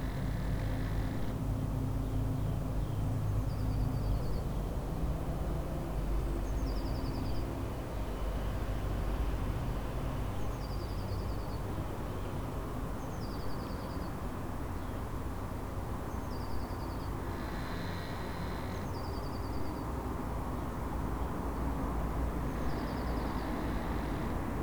berlin: dorotheenstädtischer friedhof - the city, the country & me: dorotheenstadt cemetery, squeaking flagstaff
squeaking flagstaff from a nearby hotel, birds, traffic noise of hannoversche straße
the city, the country & me: april 10, 2011
Berlin, Germany, 10 April 2011, ~17:00